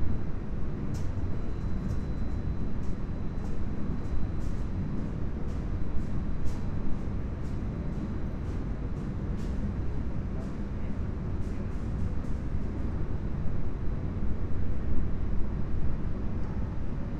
mori tower, skydeck, roppongi, tokyo - 54th floor tokyo soundscape
sonic scape of the city silenced by heavy machinery, which is located all around skydeck